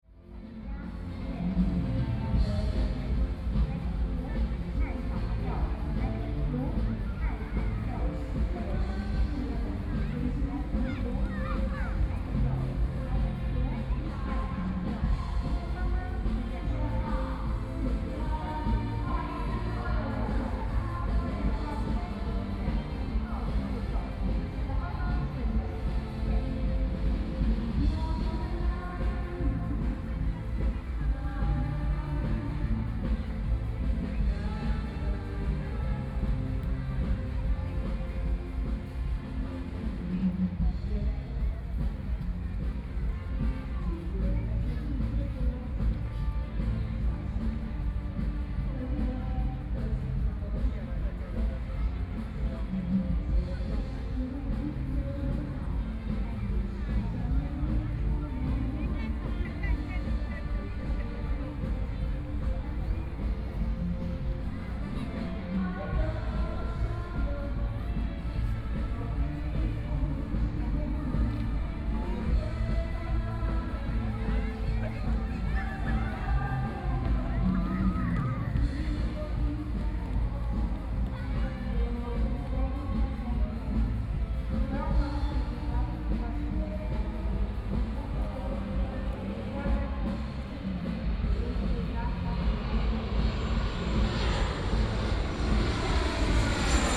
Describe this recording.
First Full Moon Festival, Aircraft flying through, A lot of tourists, Please turn up the volume, Binaural recordings, Zoom H4n+ Soundman OKM II